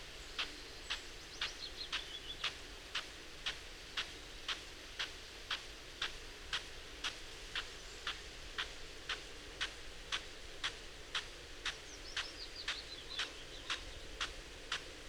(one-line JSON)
{"title": "Croome Dale Ln, Malton, UK - field irrigation system ...", "date": "2020-05-20 06:30:00", "description": "field irrigation system ... parabolic ... a Bauer SR 140 ultra sprinkler to Bauer Rainstart E irrigation unit ... what fun ...", "latitude": "54.11", "longitude": "-0.55", "altitude": "85", "timezone": "Europe/London"}